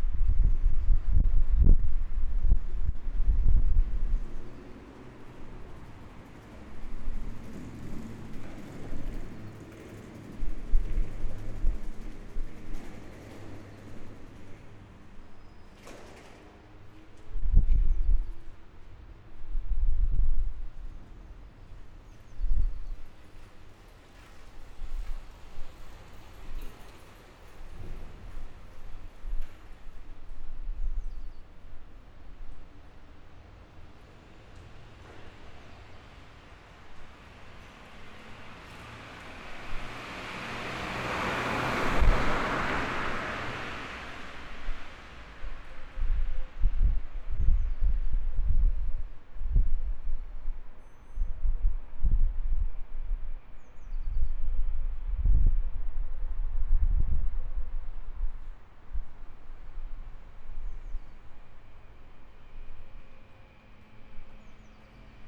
Utrecht, Overste den Oudenlaan, Utrecht, Netherlands - Kanaalweg Tunnel Underneath 17/04/2019 @ 9.52Am
Kanaalweg Tunnel Underneath 17/04/2019 @ 9.52Am. A repaired file, less clipping from the wind. A fine art masters project on spatial interaction. recorded under the tunnel/underpass on kanaalweg on my journey to and from my studio. Recorded just after rush hour.